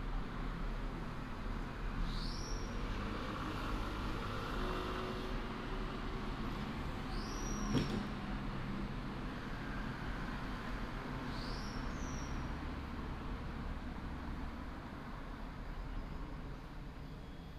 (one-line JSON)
{"title": "大溪區復興路一段, Taoyuan City - Bird and Traffic sound", "date": "2017-08-09 16:41:00", "description": "Bird call, Traffic sound", "latitude": "24.84", "longitude": "121.30", "altitude": "288", "timezone": "Asia/Taipei"}